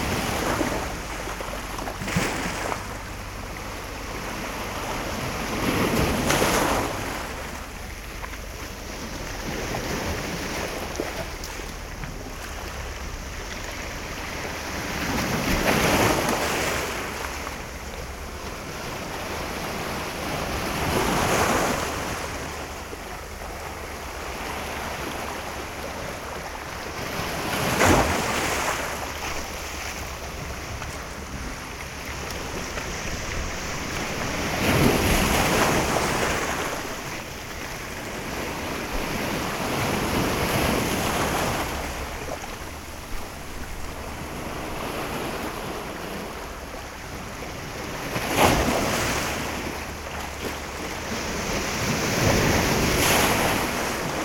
Kalkan, Turkey - 915e waves on the rocks
Binaural recording of waves hitting rocks in the small cove near the beach.
Binaural recording made with DPA 4560 on Tascam DR 100 MK III.